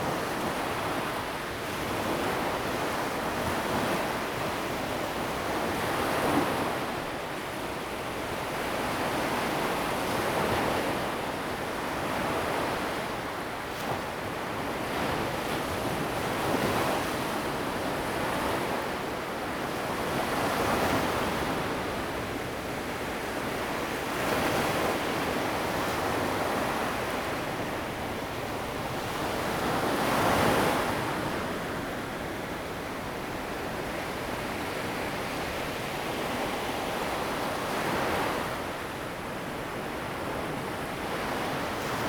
Xinwu Dist., Taoyuan City - the waves
Beach, Sound of the waves, High tide time, Zoom H2n MS+XY